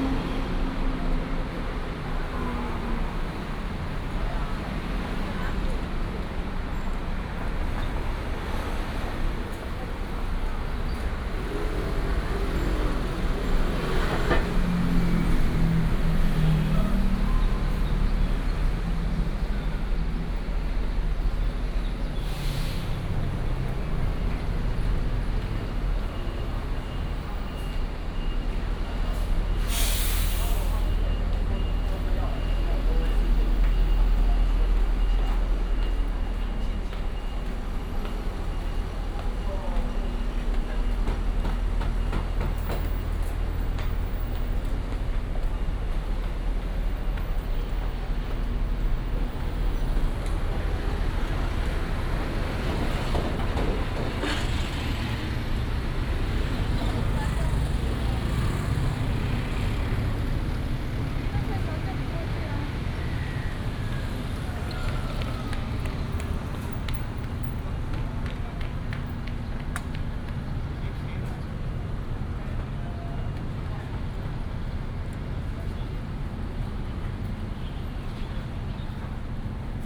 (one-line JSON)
{"title": "Ln., Sec., Zhongxiao E. Rd. - Walking on the road", "date": "2015-06-18 18:00:00", "description": "Hot weather, Starting from the alley toward the main road, Traffic noise", "latitude": "25.04", "longitude": "121.54", "altitude": "17", "timezone": "Asia/Taipei"}